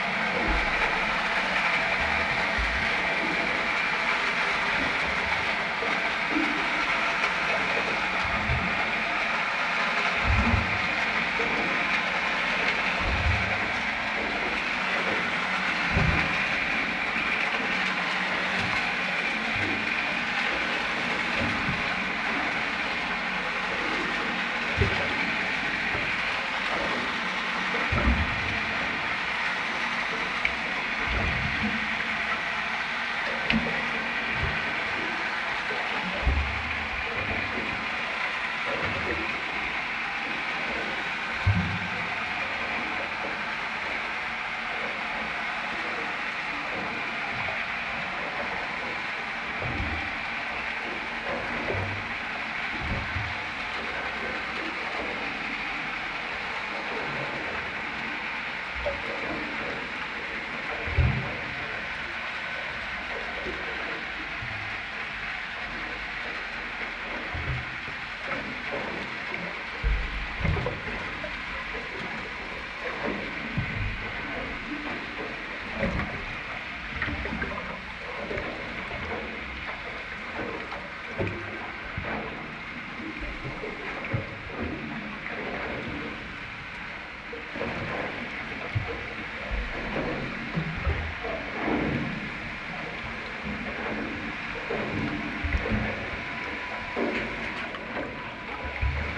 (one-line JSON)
{"title": "Parallel sonic worlds: crossfading from above to below water and back, Blackfriars Pier, White Lion Hill, London, UK - Parallel sonic worlds: crossfading from above to below water and back", "date": "2022-05-16 12:15:00", "description": "Standing on the river bank watching the boats pass the sound they make underwater is inaudible. However, it is loud and often strident. This recording uses a hydrophone and normal microphones. The track starts above water and slowly crossfades below the surface. Water slopping against the pier is heard from both, albeit differently, but the sound of the boats only underwater. Coots call at the beginning.", "latitude": "51.51", "longitude": "-0.10", "altitude": "14", "timezone": "Europe/London"}